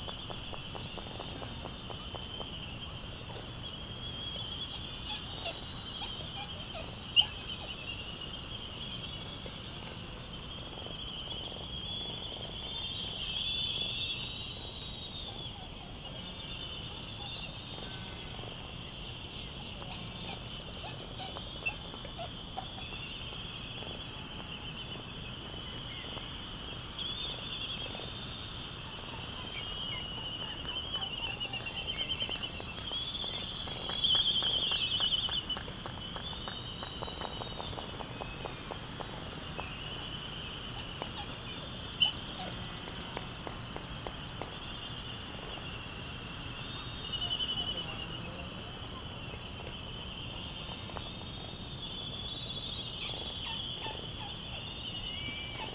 United States Minor Outlying Islands - Laysan albatross soundscape ...
Laysan albatross soundscape ... Sand Island ... Midway Atoll ... laysan albatross calls and bill clappers ... white terns ... canaries ... black noddy ... open lavaliers either side of a fur covered table tennis bat used as a baffle ... background noise ... wind thru iron wood trees ... voices ... doors banging ...
March 2012